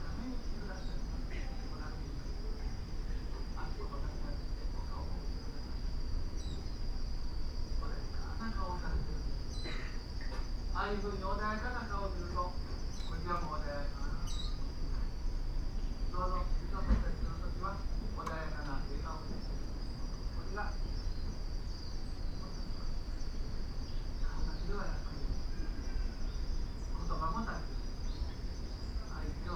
Suzumushi-dera, Kyoto - small garden and suzumushi
suzumushi - bell cricket can be heard around here, voices of lecture on Zen Buddhism from inside the wooden house